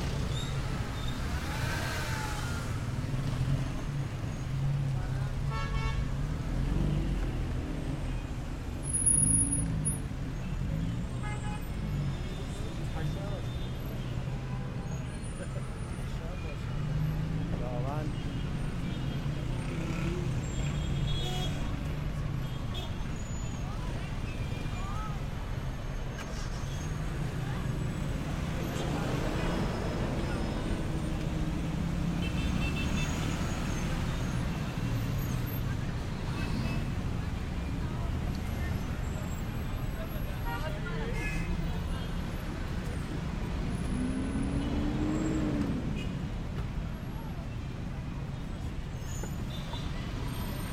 Tehran Province, Tehran, Tajrish Square, Iran - Tajrish square
Recorded with a zoom h6 recorder.
I was circling around the square.